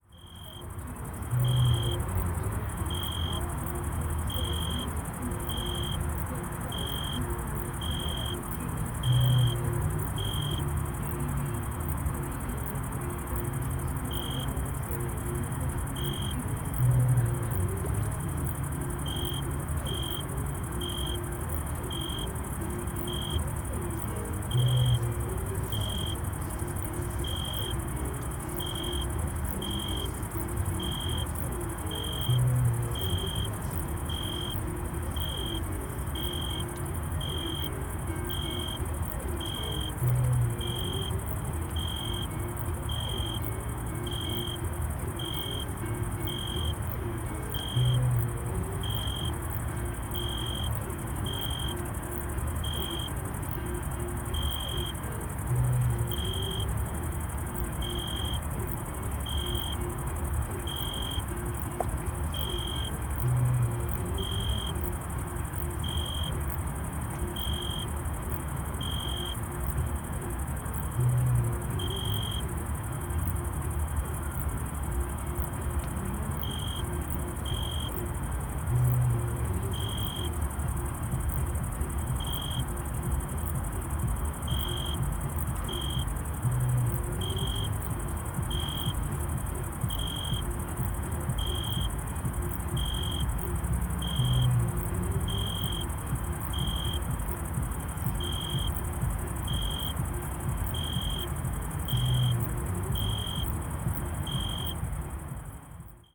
crickets and disco on the danube, Vienna
unsuccessful attempt to record some beavers